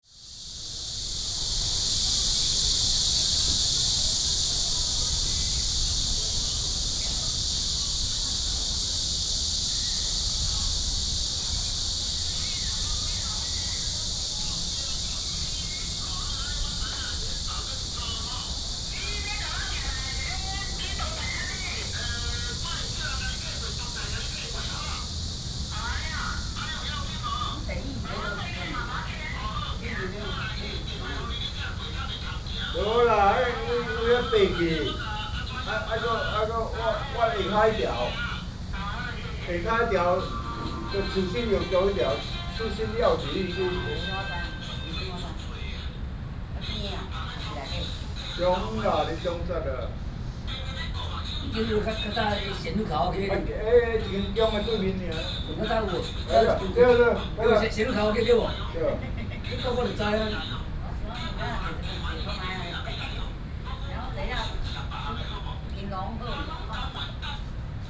崑崙公園, Shalun St., Banqiao Dist. - in the Park
Cicadas called, in the Park
Binaural recordings
Sony PCM D50 + Soundman OKM II